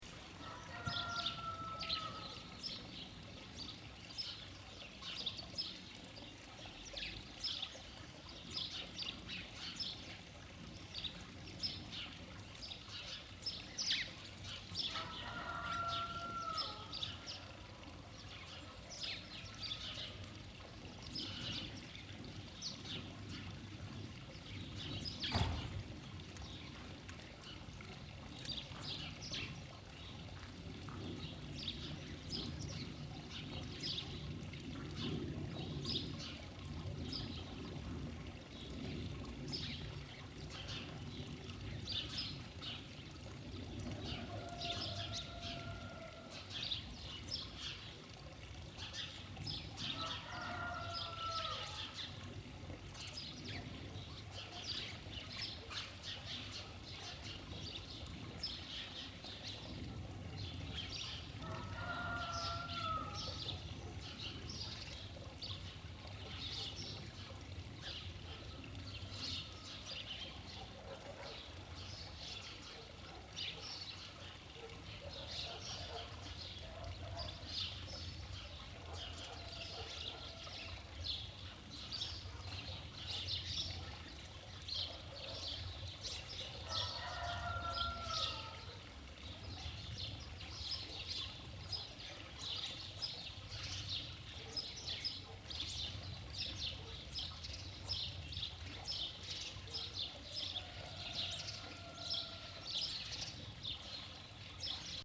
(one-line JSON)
{"title": "Largo da Igreja, Bruçó, Portugal - Aldeia de Bruçó, Portugal", "date": "2014-02-21 12:30:00", "description": "Aldeia de Bruçó, Portugal Mapa Sonoro do rio Douro. Bruçó, Portugal. Douro River Sound Map", "latitude": "41.24", "longitude": "-6.68", "altitude": "684", "timezone": "Europe/Lisbon"}